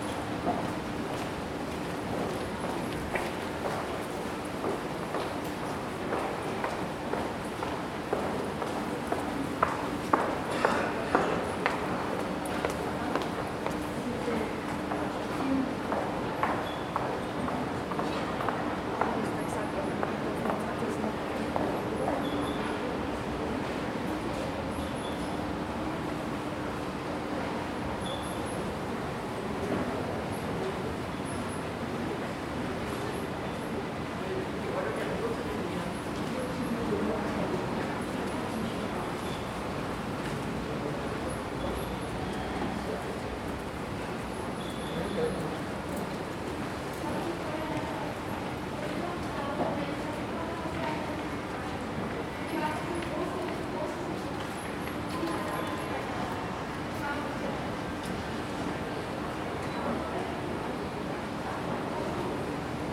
Hütteldorf U-Bahn, Bahnhof, Wien, Österreich - underpass
underpass sounds and noises then entering elevator to platform at Bahnhof Hütteldorf Vienna